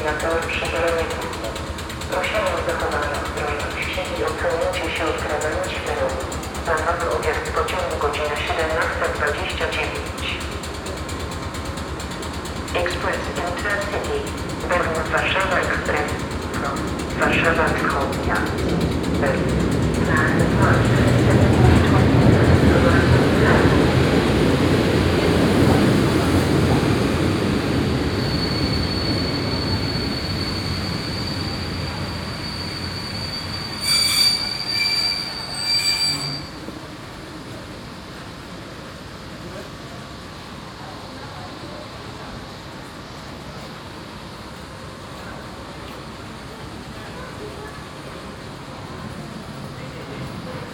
Poznan, main train station, platform - between two idling trains
binaural rec. walking around the platform before getting on the train. station announcements. passengers arriving at the platform. swooshes and clatter of the idling trains on both tracks.